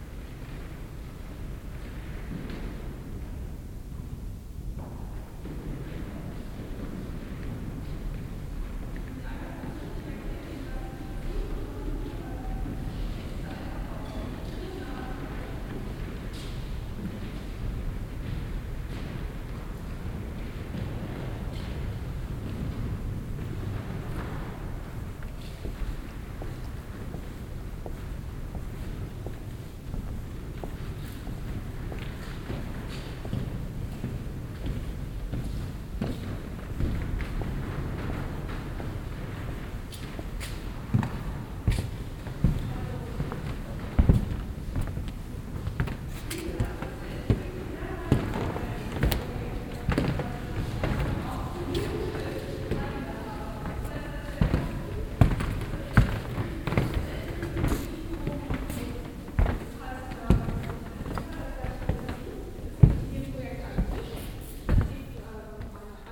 essen, gelände zeche zollverein, salzlager, kabakov installation
ausstellungshalle im ehemaligen salzlager auf dem gelände des weltkulturerbes zeche zollverein, besuchergruppe und schritte in die installation "der palast der projekte" von ilya & emilia kabakov
soundmap nrw
social ambiences/ listen to the people - in & outdoor nearfield recordings